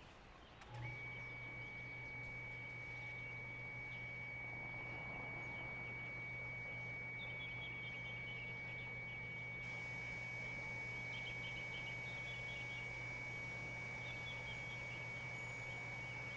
雲林縣水林鄉蕃薯村 - Small village
On the Penthouse platform, Neighbor's voice, Birdsong sound, Chicken sounds, The sound of firecrackers, Pumping motor sound, Motorcycle sound, Zoom H6 M/S